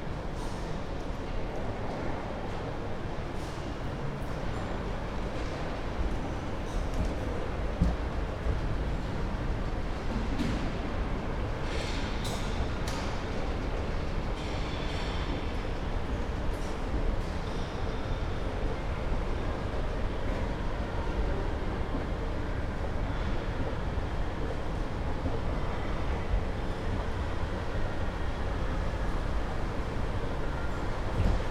Esch-sur-Alzette, Belval, walking in a shopping center, it has just opened, only a few people are around
(Sony PCM D50, Primo EM172)
Canton Esch-sur-Alzette, Lëtzebuerg, 11 May 2022